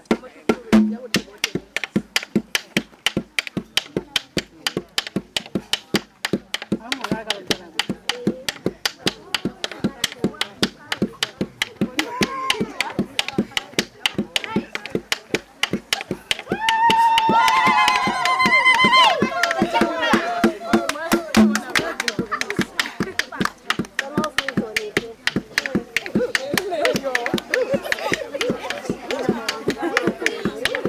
Damba Primary School, Binga, Zimbabwe - Lets dance...
… after all the speeches, pupils are entertaining the guests with poems, little drama plays, music and dance…